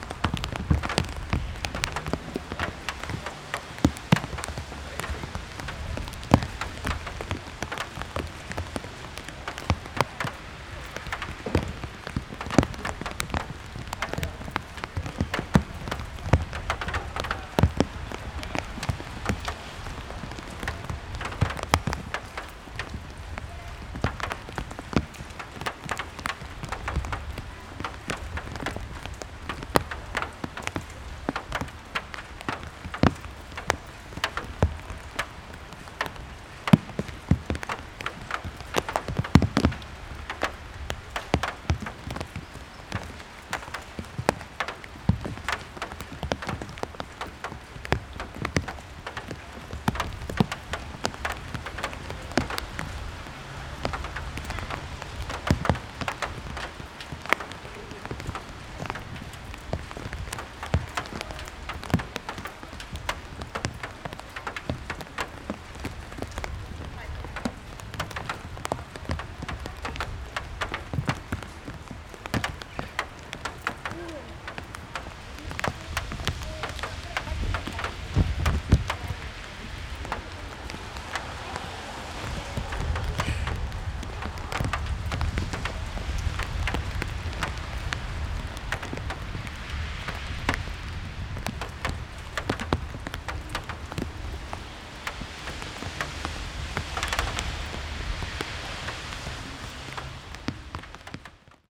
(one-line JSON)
{"date": "2011-07-18 12:30:00", "description": "raindrops, time, repetition, as a fire sound", "latitude": "63.17", "longitude": "17.27", "altitude": "2", "timezone": "Europe/Stockholm"}